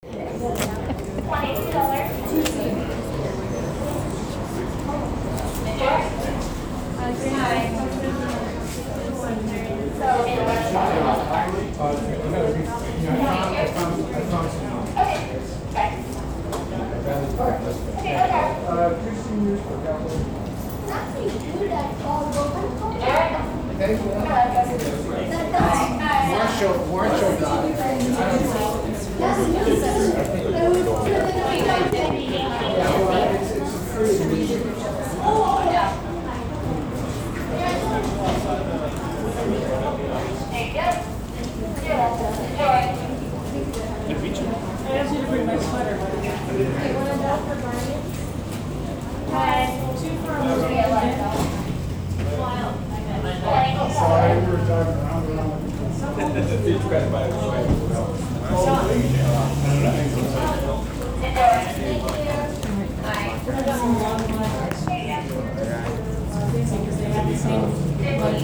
Walnut Creek, CA, USA - Tickets
Someone taking tickets for a movie. Recorded with a Samsung S5 Android phone.